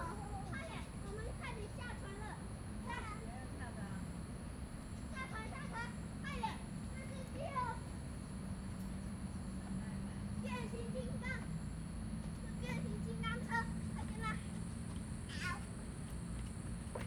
台東森林公園, Taitung City - Children
In the park, Children's play area
Zoom H2n MS+ XY